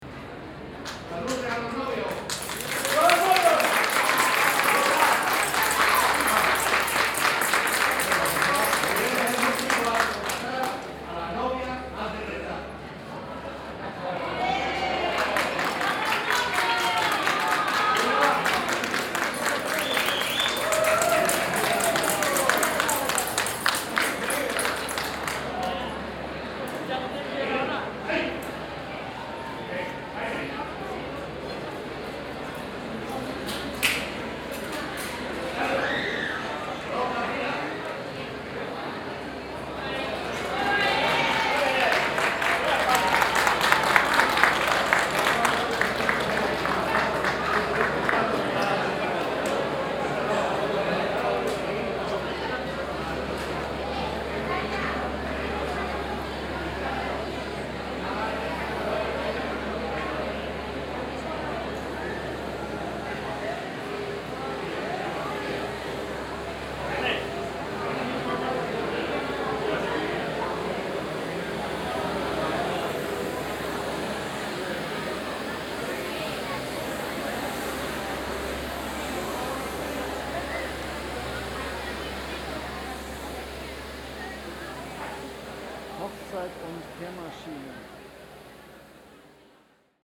Sevilla, Provinz Sevilla, Spanien - Sevilla - Ayuntamiento de Sevilla - wedding in uniform
At the Ayuntamiento de Sevilla in the evening at the white night. A wedding in uniform - soldiers building a line with swords salut for a couple. The wedding crowd taking pictures and applaud.
international city sounds - topographic field recordings and social ambiences